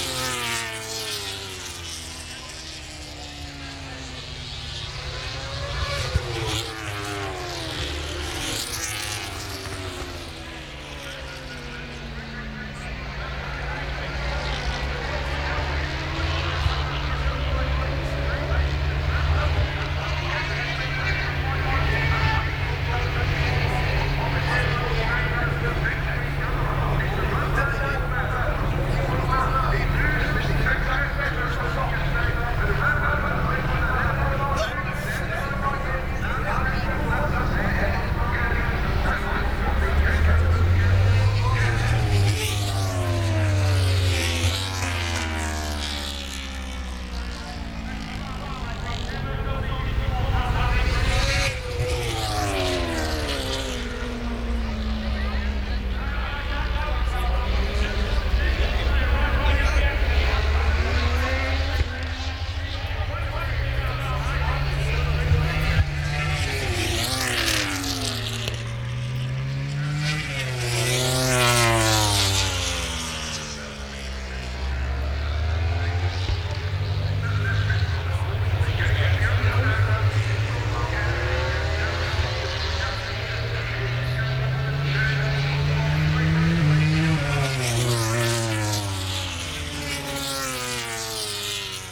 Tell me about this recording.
motogp warmup ... lavalier mics ...